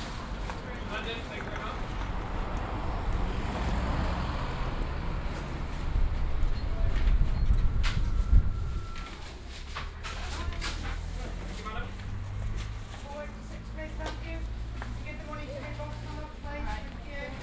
{"title": "Outside fishmongers", "date": "2010-08-13 10:45:00", "description": "Standing outside the fishmongers on Queen Street", "latitude": "50.53", "longitude": "-3.61", "altitude": "8", "timezone": "Europe/London"}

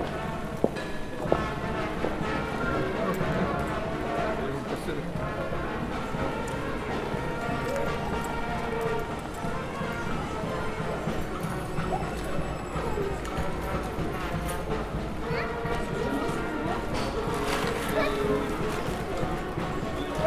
{"title": "Rue Croix d'Or, Chambéry, France - Rue Croix d'Or", "date": "2017-09-29 17:00:00", "description": "L'ambiance de la Rue Croix d'Or à Chambéry un samedi après midi, fanfare Place St Léger.", "latitude": "45.56", "longitude": "5.92", "altitude": "279", "timezone": "Europe/Paris"}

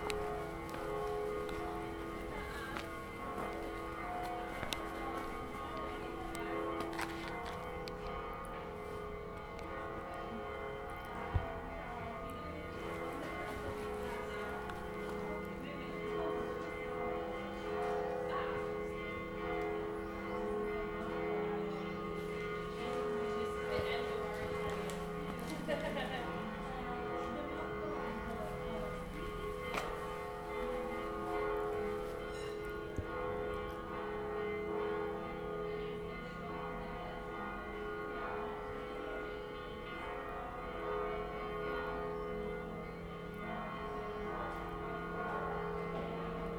{"title": "Via de Luca Cardinale, Bronte CT, Italy - bells", "date": "2019-03-19 00:03:00", "description": "bells at Bronte old town", "latitude": "37.79", "longitude": "14.83", "altitude": "737", "timezone": "Europe/Rome"}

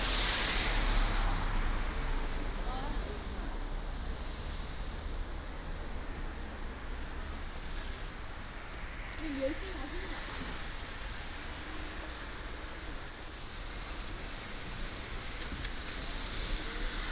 {"title": "Seosang-dong, Gimhae-si - Walking in the Street", "date": "2014-12-15 20:14:00", "description": "Walking in the Street, Traffic Sound, Crying children", "latitude": "35.23", "longitude": "128.88", "altitude": "11", "timezone": "Asia/Seoul"}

